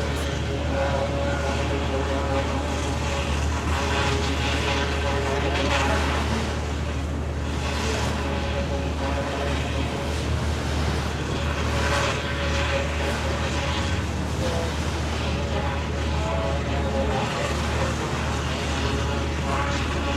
Rusfin, Magallanes y la Antártica Chilena, Chile - storm log - russfin sawmill
busy sawmill, wind 20 km/h, ZOOM F1, XYH-6 cap
Forestal Russfin, 1.2MW central power station using forestry biomass, for a lenga wood sawmill in the middle of Tierra del Fuego.